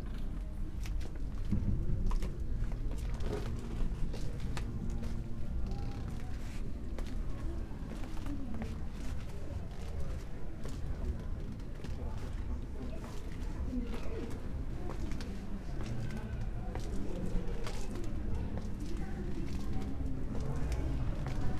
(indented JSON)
{
  "title": "tight emptiness between neighboring houses, gornji trg, ljubljana - reading Pier Paolo Pasolini",
  "date": "2015-07-02 18:19:00",
  "description": "reading poem Pošast ali Metulj? (Mostru o pavea?) by Pier Paolo Pasolini",
  "latitude": "46.05",
  "longitude": "14.51",
  "altitude": "304",
  "timezone": "Europe/Ljubljana"
}